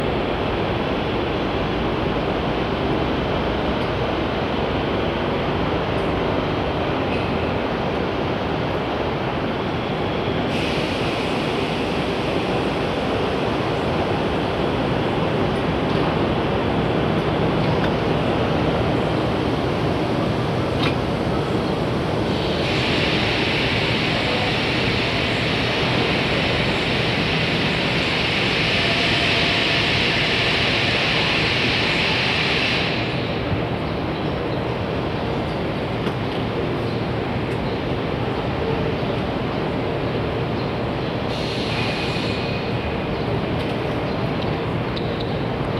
Milan, Italy - Milan Central Station
Noisy Station - distorted. Stereo mic sony walkman